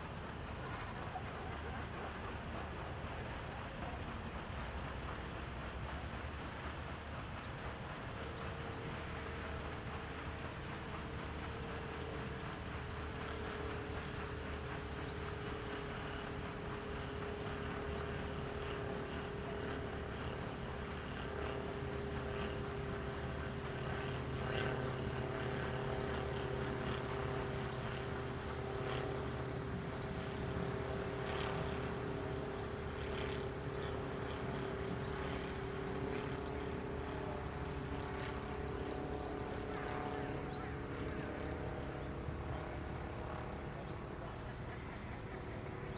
Söbrigener Str., Dresden, Deutschland - Comoranes on Dresden Elbe Island
Comoranes on Dresden's Elbe island, paddle wheel steamers, paddle boats and motor boats pass by. Small motorized airplanes fly by and horses neigh at a riding tournament. Crows and other birds can be heard. Recorded with a Zoom H3 recorder.